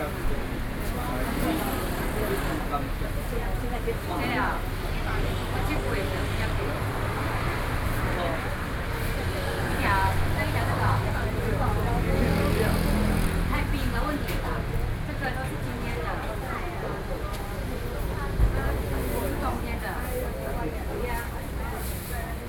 Línyí St, Zhongzheng District - Traditional markets